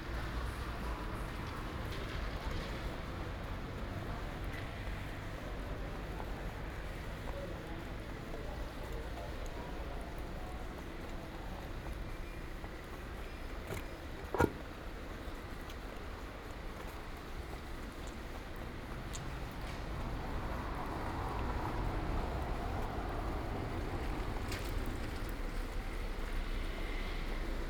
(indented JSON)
{
  "title": "Ascolto il tuo cuore, città. I listen to your heart, city. Several chapters **SCROLL DOWN FOR ALL RECORDINGS** - Evening return home with break in the time of COVID19 Soundwalk",
  "date": "2020-06-18 23:03:00",
  "description": "\"Evening return home with break in the time of COVID19\" Soundwalk\nChapter CIX of Ascolto il tuo cuore, città. I listen to your heart, city\nThursday, June 18th 2020. Back San Salvario district, through Porta Susa and Porta Nuova railway station one one hundred days after (but day forty-six of Phase II and day thirty-three of Phase IIB and day twenty-seven of Phase IIC and day 4st of Phase III) of emergency disposition due to the epidemic of COVID19.\nStart at 11:03 p.m. end at 11:58 p.m. duration of recording 55’37”\nAs binaural recording is suggested headphones listening.\nBoth paths are associated with synchronized GPS track recorded in the (kmz, kml, gpx) files downloadable here:\nGo to similar path n.47 “\"Morning AR with break in the time of COVID19\" Soundwalk",
  "latitude": "45.06",
  "longitude": "7.68",
  "altitude": "249",
  "timezone": "Europe/Rome"
}